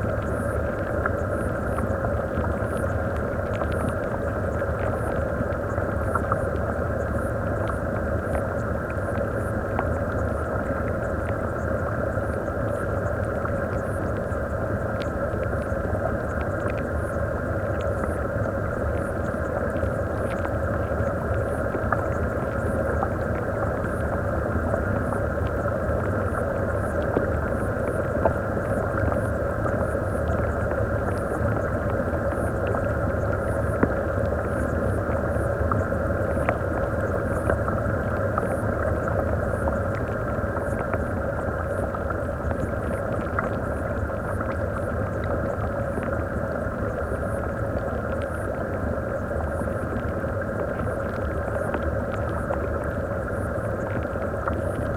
Exploración con hidrófonos del torrente y la cascada.